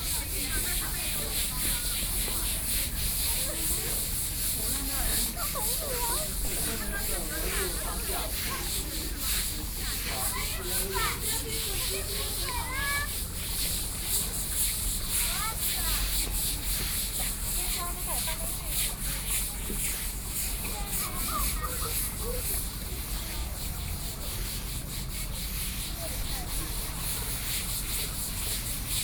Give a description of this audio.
Brush, Clean the floor, Aircraft flying through